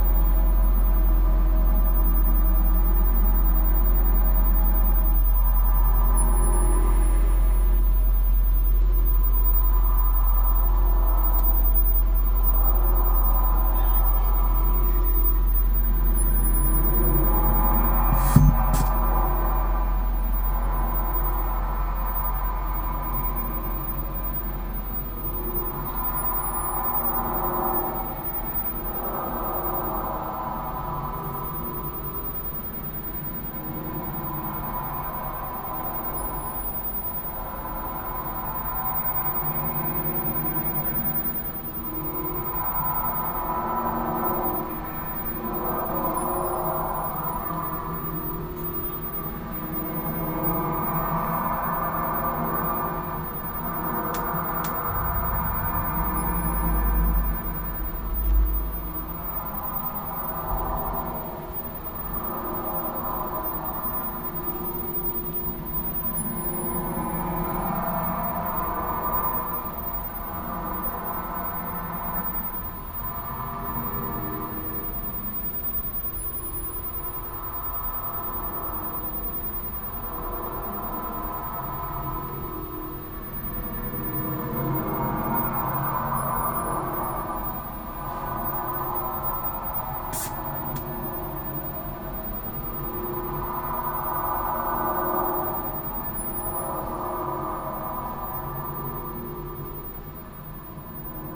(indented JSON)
{
  "title": "lippstadt, light promenade, installation the mediator",
  "date": "2009-10-17 14:13:00",
  "description": "the installation is part of the project light promenade lippstadt curated by dirk raulf\nfurther informations can be found at:\nsound installations in public spaces",
  "latitude": "51.68",
  "longitude": "8.34",
  "altitude": "79",
  "timezone": "Europe/Berlin"
}